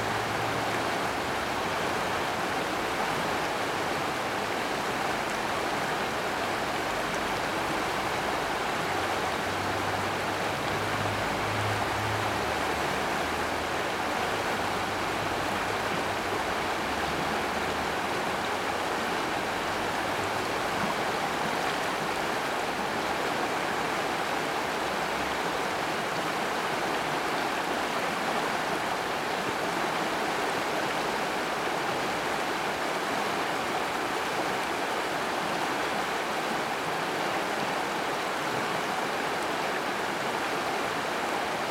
Pont d'Outrelepont, Malmedy, Belgique - Warche river
River is quite high.
Tech Note : Sony PCM-D100 internal microphones, wide position.